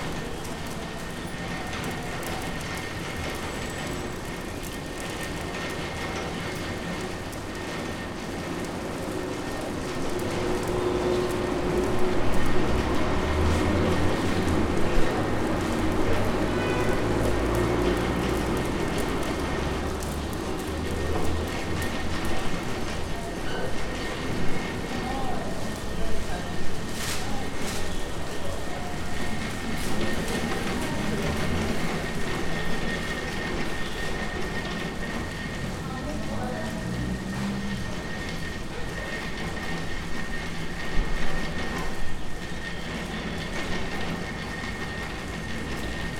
Gomi-Sachkhere-Chiatura-Zestaponi, Chiatura, Georgia - Cable car station in Chiatura
The mechanism of one of the many cable cars in this city is heard while people wait for the next car to arrive.
იმერეთი, Georgia / საქართველო